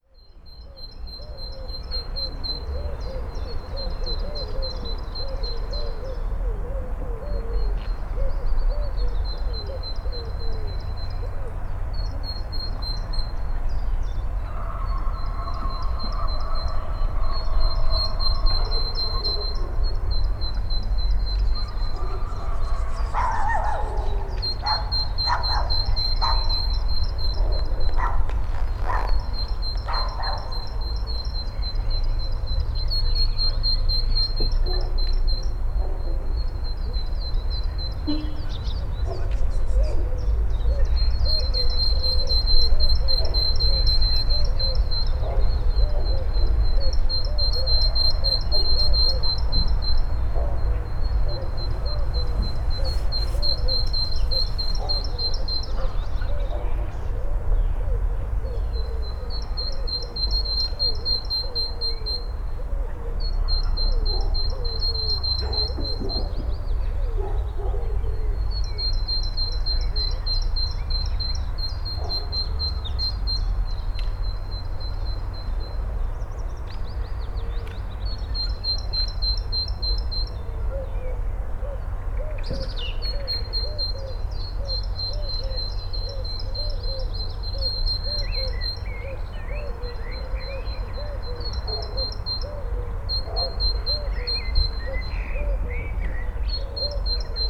Teofila Mateckiego, Poznan - spring bird activity
bird communication on a back road. (roland r-07)